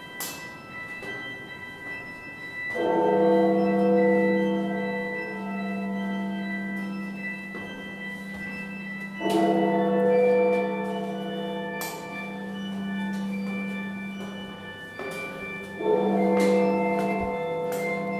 This is a recording of the emergency staircase of the Calgary Tower at Noon, when the Carillon Bells toll and play music. The stairs are next to elevator and the sound of it passing by, through the walls, can be heard.
Calgary, AB, Canada, 5 June 2015, 12:00